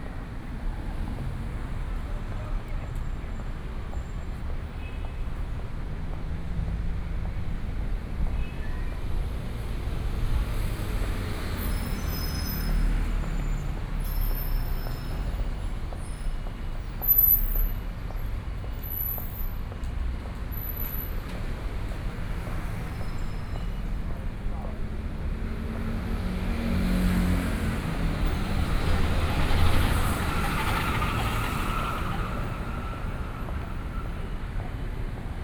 Traffic Sound, Follow the footsteps of sound
Shilin District, Taipei City, Taiwan, 3 May 2014